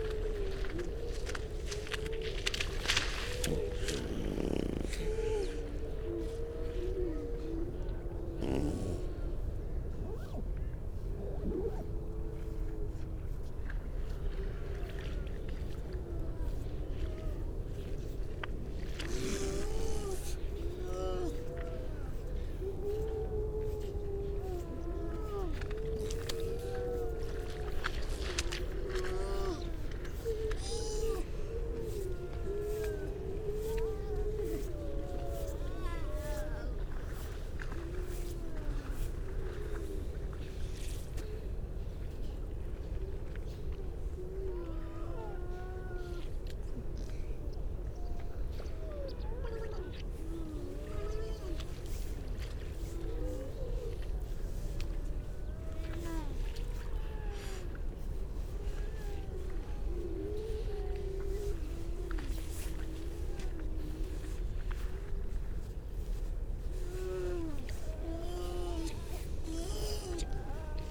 {
  "title": "Unnamed Road, Louth, UK - grey seals soundscape ...",
  "date": "2019-12-03 09:45:00",
  "description": "grey seals soundscape ... generally females and pups ... parabolic ... bird calls ... pipit ... crow ... pied wagtail ... skylark ... all sorts of background noise ...",
  "latitude": "53.48",
  "longitude": "0.15",
  "altitude": "1",
  "timezone": "Europe/London"
}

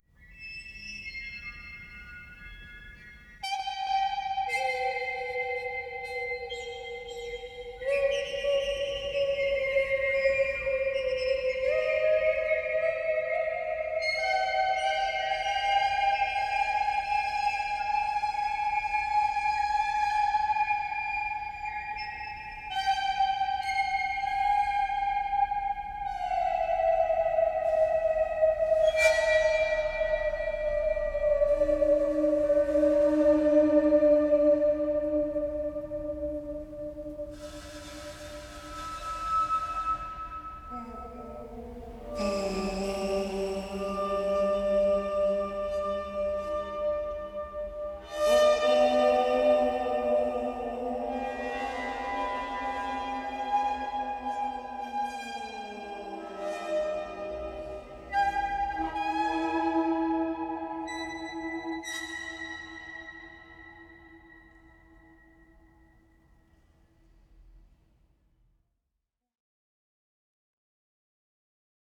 {
  "title": "Pivovarská, Plasy, Czechia - whistling in the chapel",
  "date": "2018-05-11 16:32:00",
  "description": "recording of a falcon in the chapel of saint benedict",
  "latitude": "49.93",
  "longitude": "13.39",
  "altitude": "331",
  "timezone": "GMT+1"
}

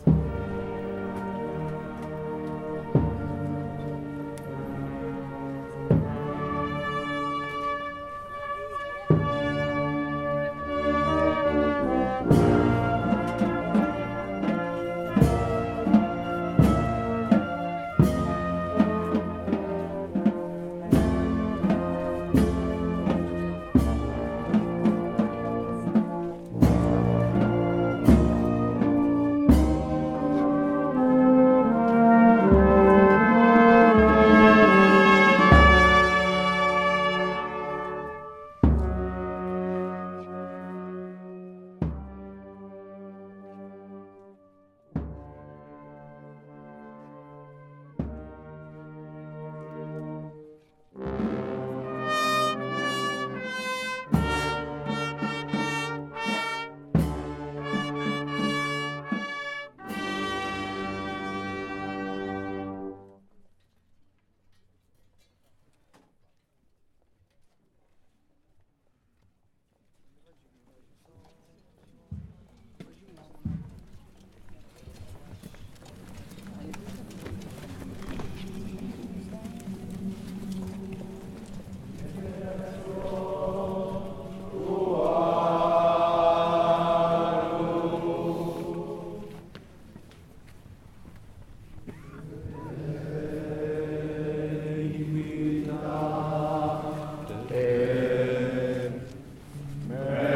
{
  "title": "Sant'Agnello, Italie - Black procession of the Easter",
  "date": "2014-04-18 03:04:00",
  "description": "At 3 o'clock in the night, more than 200 men walk in the village with the 'Black Madonna'. They move slowly, all the bodies and faces hidden in a black suit, singing and praying.",
  "latitude": "40.63",
  "longitude": "14.40",
  "altitude": "62",
  "timezone": "Europe/Rome"
}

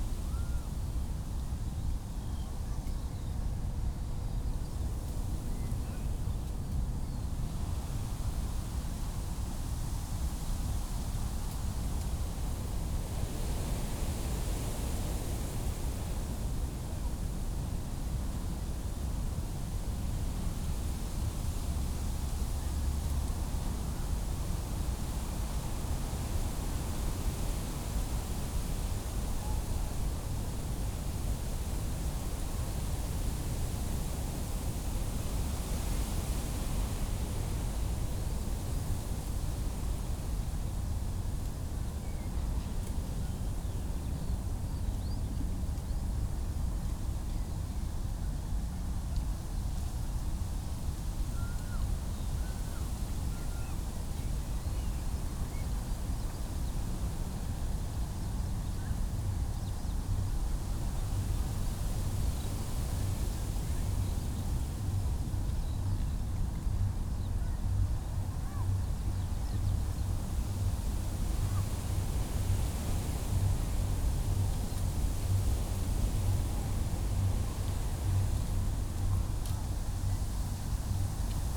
{
  "title": "Tempelhofer Feld, Berlin - Wind in Robinia bush, drone of sound system",
  "date": "2019-06-15 18:20:00",
  "description": "behind a big Robinia bush, fresh wind, distant sound system drone, annoying since it can be heard often these days\n(Sony PCM D50, DPA4060)",
  "latitude": "52.48",
  "longitude": "13.41",
  "altitude": "49",
  "timezone": "Europe/Berlin"
}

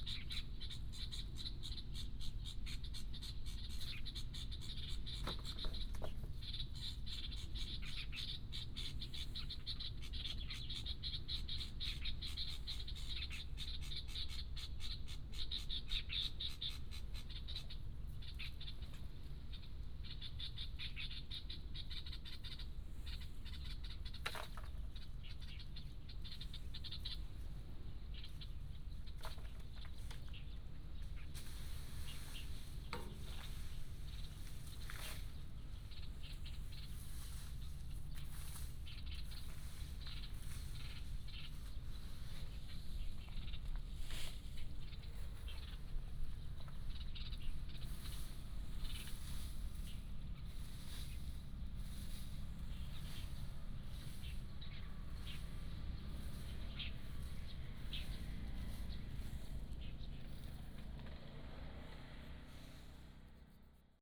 {
  "title": "Huxi Township, Penghu County - Birds singing",
  "date": "2014-10-21 08:01:00",
  "description": "In the parking lot of the beach, Birds singing",
  "latitude": "23.56",
  "longitude": "119.64",
  "altitude": "7",
  "timezone": "Asia/Taipei"
}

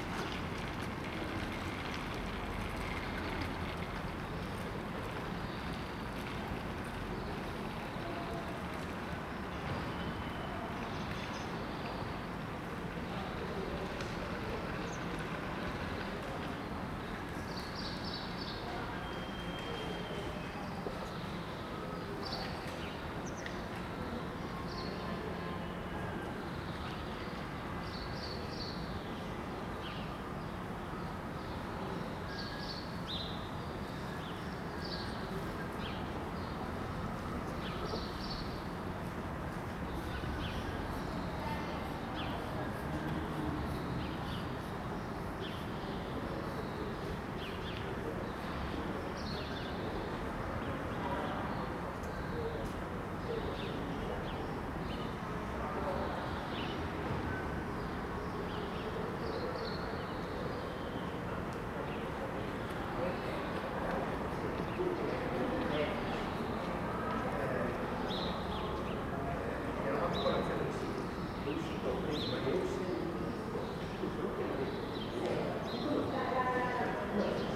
{
  "title": "Salesiani, Torino, Italy - Ambience of the Salesiani courtyard",
  "date": "2015-03-19 07:23:00",
  "description": "Birds, traffic, and echoey voices and footsteps.",
  "latitude": "45.08",
  "longitude": "7.68",
  "altitude": "239",
  "timezone": "Europe/Rome"
}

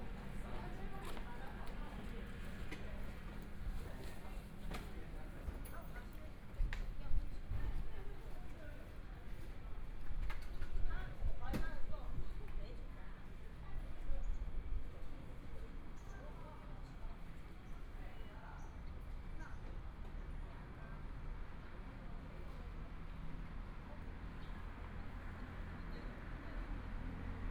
{
  "title": "Taitung Station, Taiwan - outside of the Station",
  "date": "2014-01-18 10:45:00",
  "description": "Travelers to and from the Station outside the channel, Binaural recordings, Zoom H4n+ Soundman OKM II",
  "latitude": "22.79",
  "longitude": "121.12",
  "timezone": "Asia/Taipei"
}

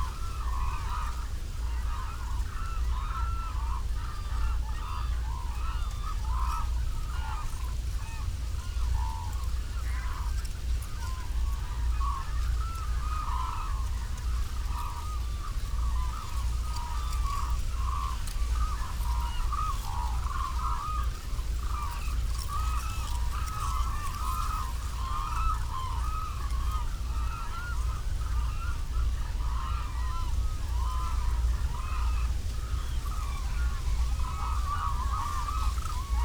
{
  "title": "Black hooded cranes from 200m 흑두루미 - Black hooded cranes from 200m 훅두루미",
  "date": "2020-01-25 12:30:00",
  "description": "migratory birds gather and socialize in post harvest rice fields...distant sounds of surrounding human activity...",
  "latitude": "34.88",
  "longitude": "127.51",
  "altitude": "4",
  "timezone": "Asia/Seoul"
}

